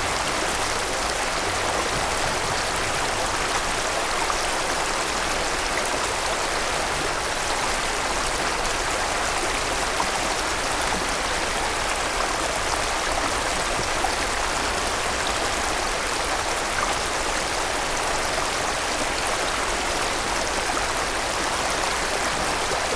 Scarning Meadow, Scarning, Dereham, Norfolk - Bubbling stream
Scarning Meadows is a County Wildlife Site with public access within a broad, shallow valley of a small tributary of the River Wensum. It had been raining for several days and the stream was flowing strongly. Recorded with a Zoom H1n with 2 Clippy EM272 mics arranged in spaced AB.
England, United Kingdom